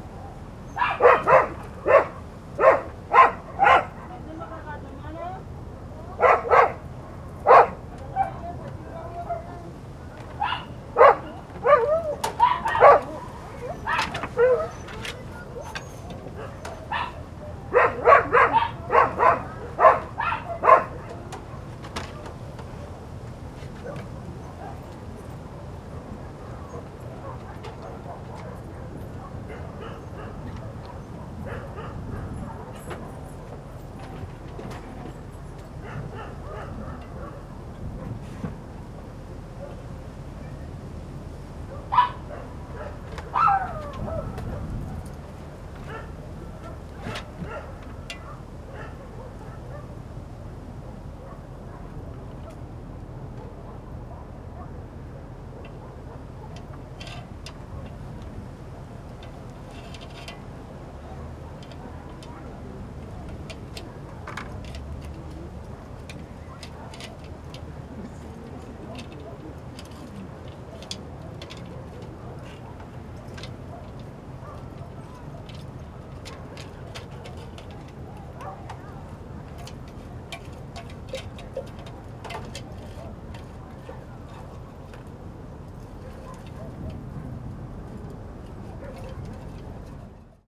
In a street from the high part of Valparaiso, on top of the hill, a dog barking, some others answering far away. Light voices of neighbors and light wind.
Recorded by a MS Setup Schoeps CCM41+CCM8
In a Cinela Leonard Windscreen
Sound Devices 302 Mixer and Zoom H1 Recorder
Sound Reference: 151202ZOOM0008
GPS location isn't exact.